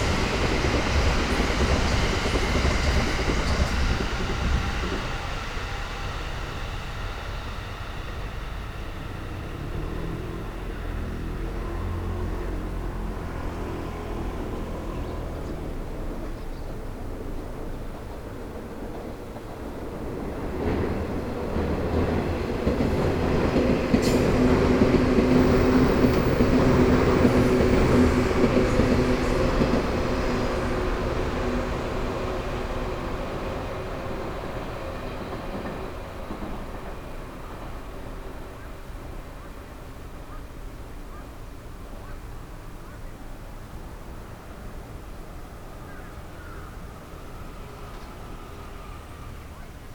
above train tracks, near Kyoto Station - shinkansen, passengers trains, cargo, crows
... and audible microphones